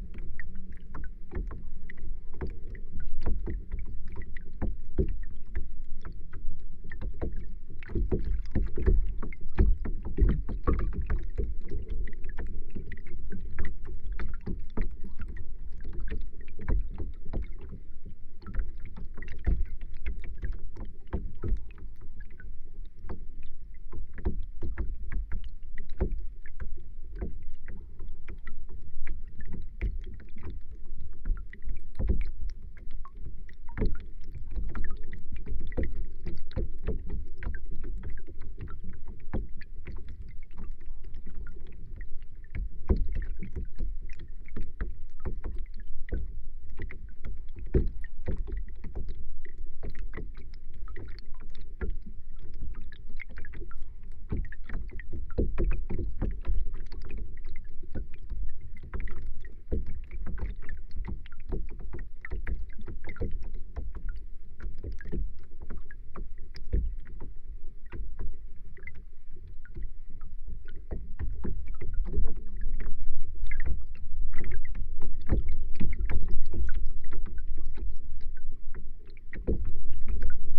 Hydrophone in the water under the bridge and LOM geophone on the bridge
29 February, 15:15